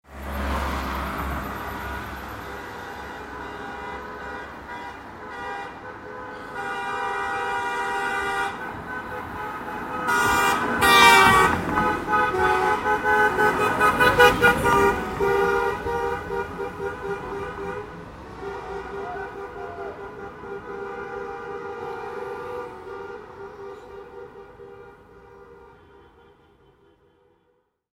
st. gallen, turkish soccer fans celebrating
after soccer game, won 3:2 against czechoslovakia. 2 cars with turkish guys passing by. recorded at about 11 p. m., june 15, 2008. - project: "hasenbrot - a private sound diary"